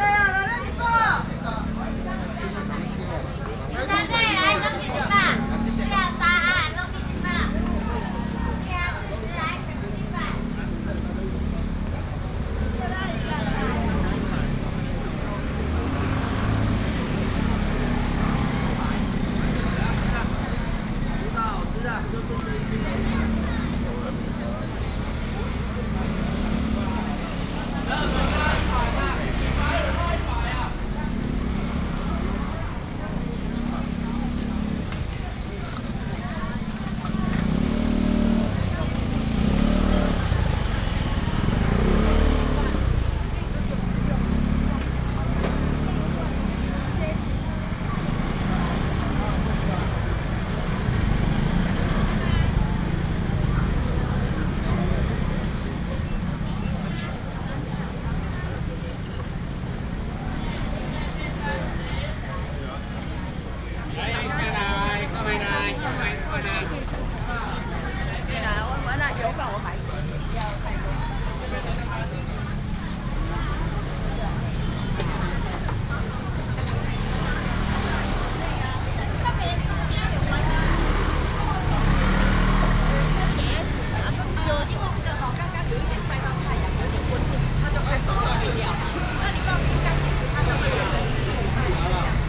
國慶黃昏市場, Banqiao Dist., New Taipei City - Evening market
in the Evening market, Traffic sound
30 April, 17:03